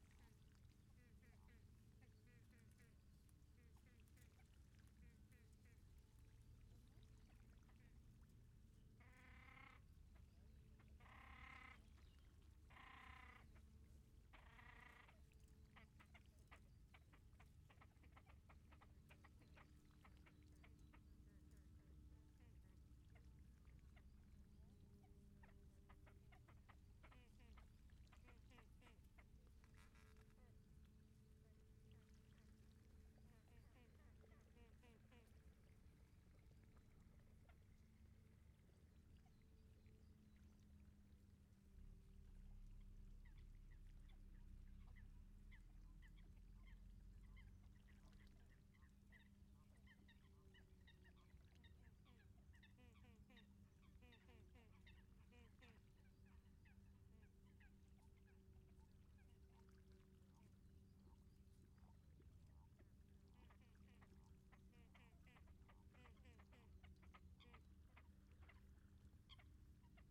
Prom. des Seines, Sévrier, France - Roselière
Au bord du lac d'Annecy, les grèbes dans la roselière des Avollions, bruits de bateaux.